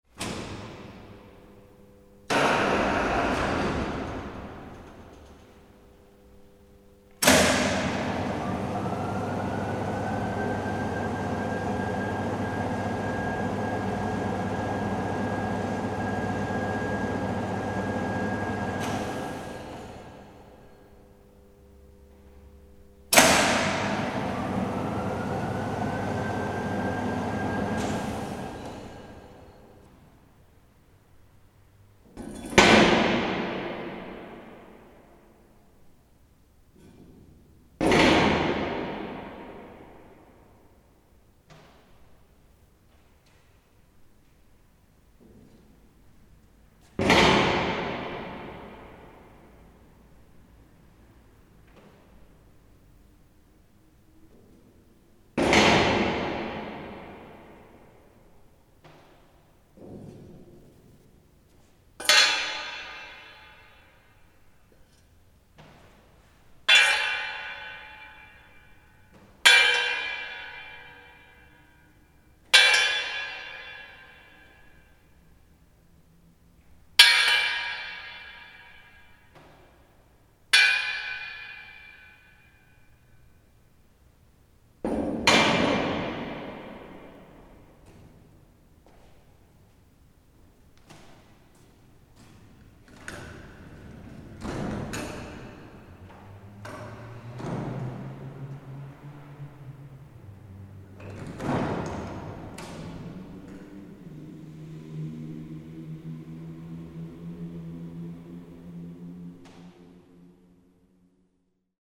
TFR, Rijeka, big-crane
Big crane (5000Kp, 20m of height)in operation.Natural reverb of big space width: 20m; Height:15m; Long: 150m;
recording setup: M/S, (Sony stereo condenser via Sony MD @ 44100KHz 16Bit